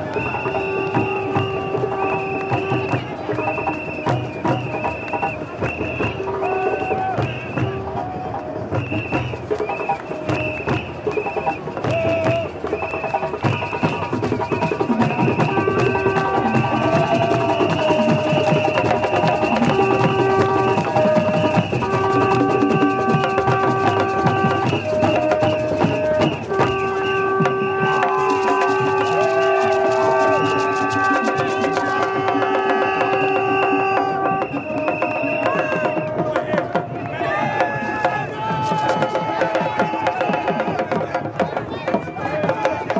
Stade Leopold Sedar Senghor Dakar, (BAS), Klanklandschap#1